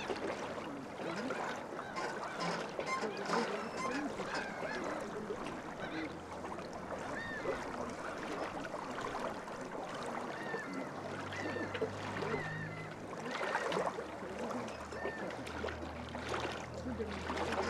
Lithuania, Dusetos, at the lake Sartai
at the lake Sartai, birds fighting for place on abandoned pontoon bridge, the sound of rusty pontoons, some old women chattering